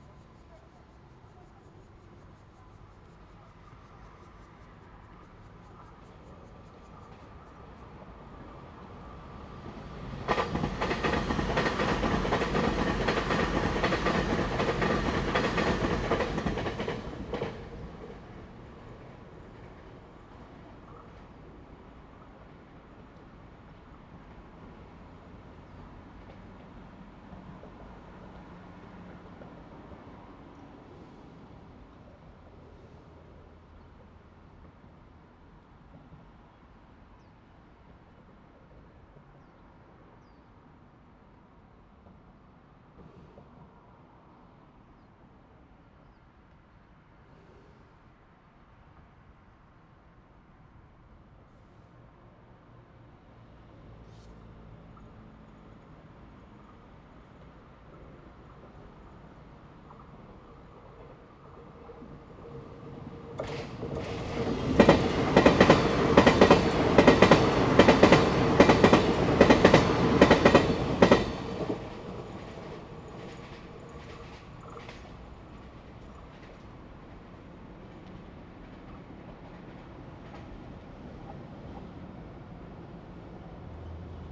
普忠路, Zhongli Dist., Taoyuan City - Next to the tracks
Next to the tracks, wind, Traffic sound, The train runs through, Zoom H2n MS+XY